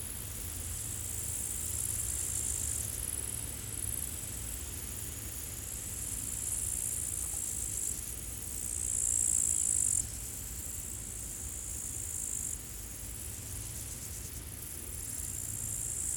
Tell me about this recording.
Une prairie où poussent librement les graminées sauvages, remplie de criquets et sauterelles, feuillages agités par le vent, dans les graves les bruits de la circulation dans la vallée.